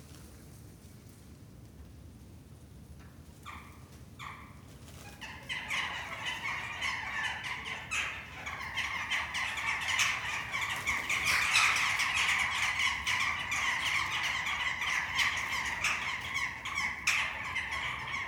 Den Haag, Netherlands - Birds fighting
Every evening around the same time there's a major dispute in our courtyard about which birds are going to sleep in which trees. Here's a short outtake (it goes on for ages). Crows, magpies, starlings and sometimes a blackbird. Seems like the current situation is encouraging birds and animals to take over the city more and more.
Recorded with a Soundfield ST350, Binaural decode.